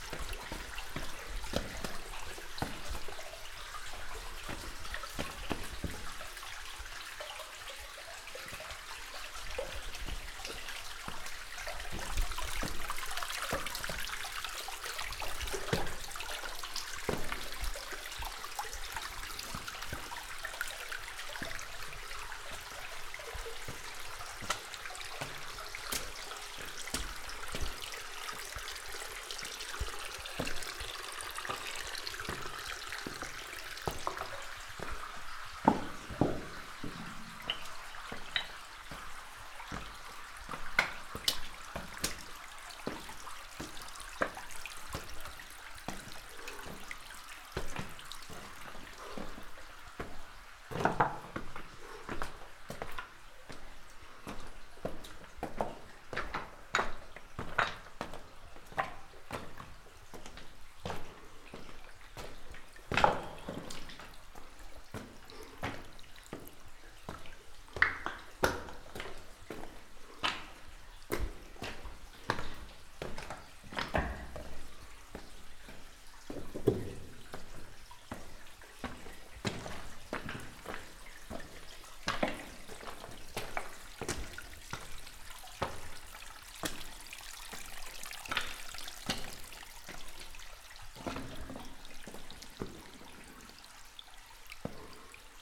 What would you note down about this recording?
Marche vers la sortie dans la grotte de Banges, changement permanent de l'acoustique suivant la forme des lieux.